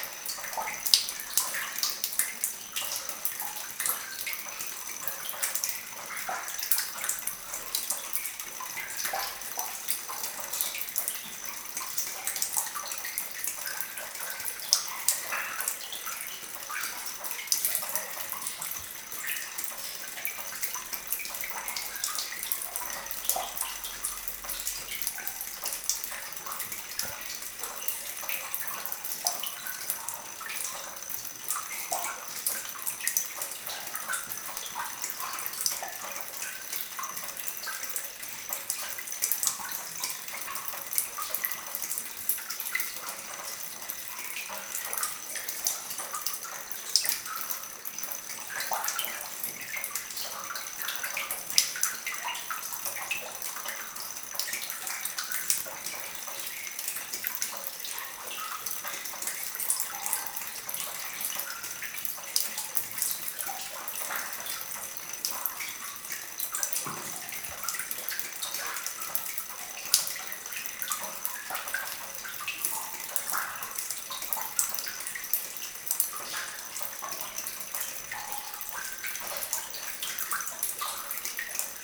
Andenne, Belgique - Underground mine
Short soundscape of an underground mine. Rain into the tunnel and reverb.
Andenne, Belgium, 25 December 2018, 16:00